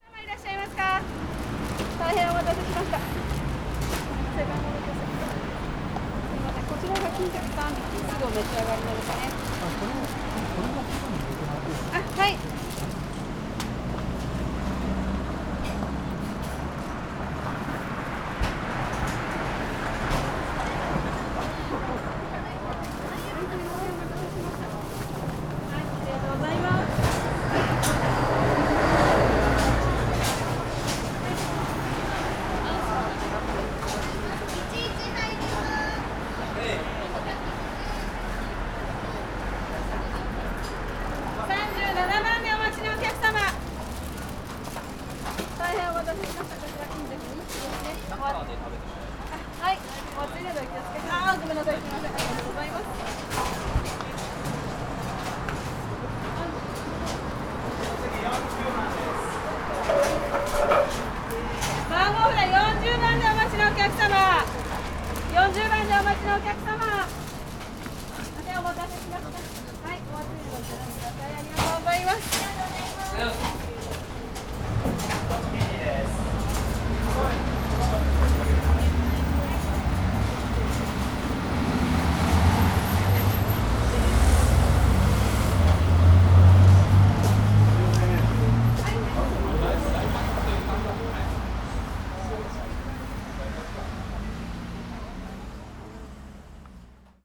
Tokyo, Sotokanda - toast place
customers waiting for their orders in front of the place. every once in a while a waitress walks out of the place and shouts out the order number. sounds of the grills in the background coming from inside of the fast food joint. one of the main streets. motorcycle roar inevitable.